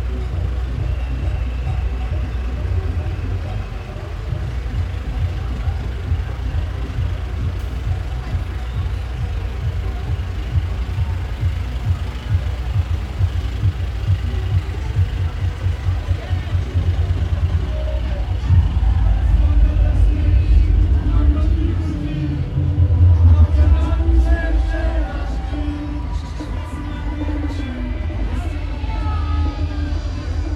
Rottmayrstraße, Laufen, Deutschland - Dissolving Carnival – End of the Procession?
The waggons and orchestras are supposed to dissolve in this narrow street and end their traditional carnival procession. But they just don't. The Bavarian samba band insists on playing on, a foghorn honks and finally gives way to the distorted bass from loudspeakers. Acoustically, the medieval street works like an intimate boombox.
Laufen, Germany, 13 February 2018, 16:00